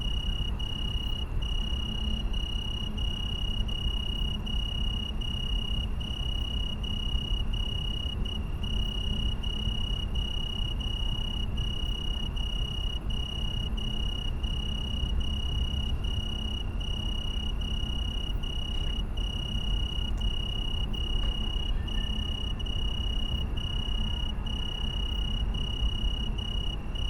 Mediapark, Köln - song of Oecanthus pellucens (Weinhähnchen)
Weinhähnchen, Oecanthus pellucens, common name Italian Tree Cricket, is a species of tree crickets belonging to the family Gryllidae, subfamily Oecanthinae.
Usually at home in the south of Europe, but can be heard all night long in this area.
(Sony PCM D50, Primo EM172)
Köln, Germany, 18 August